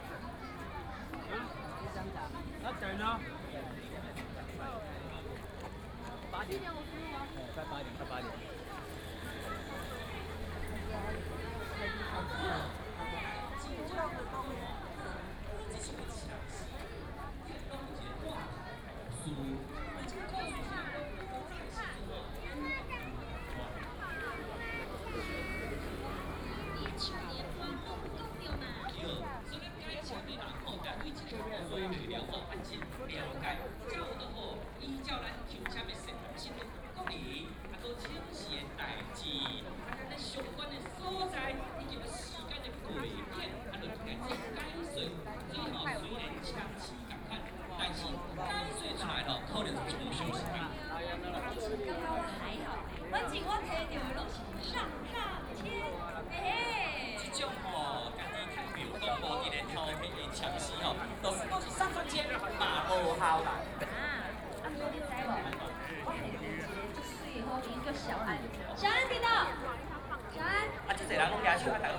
Sec., Neihu Rd., Taipei City - Festival
Walking along the lake, Very many people in the park, Distance came the sound of fireworks, Footsteps
Please turn up the volume a little. Binaural recordings, Sony PCM D100+ Soundman OKM II